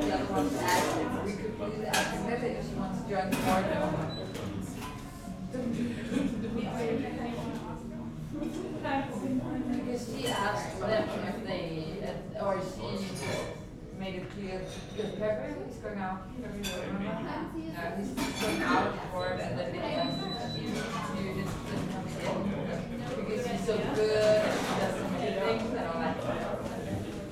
cologne, aachenerstrasse, cafe schmitz - soup and cheese cake
dinner time at metzgerei schmitz, goulash soup and cheese cake
2 March